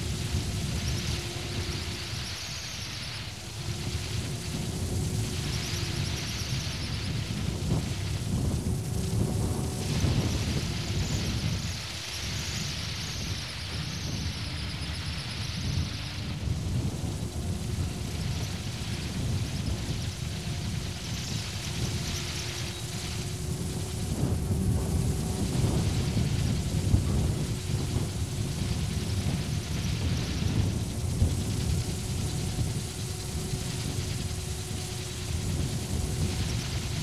Strzeszyn, Poznan outskirts - propeller
a small propeller attached to a tool shed, swooshing in the spring wind.